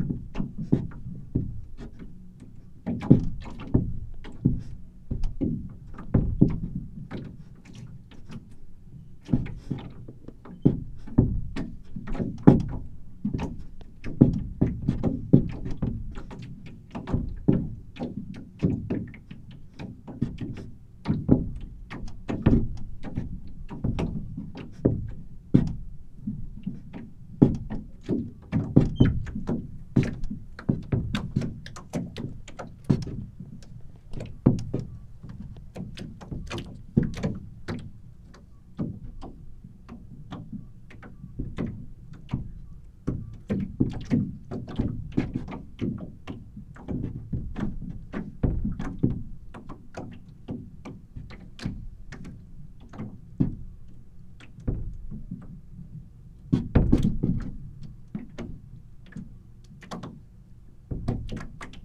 Midnight at the canoe pontoon
Recorded on a late night bicycle ride around Chuncheon lake. There is a small canoe hire business where the wooden craft are moored for the night. There was a slight breeze and lake surface was in motion, setting the canoes to knock against each other and the wharf itself. Thanks to the late hour, what is normally a noisy place was relatively free from engine sound. In the distance can be heard a 소쩍새 (Scops owl (?)).